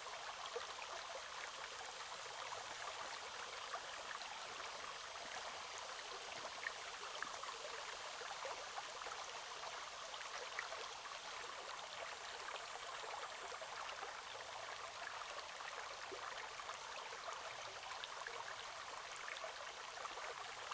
Zhonggua River, 埔里鎮成功里 - Brook
Brook, small stream
Zoom H2n Spatial audio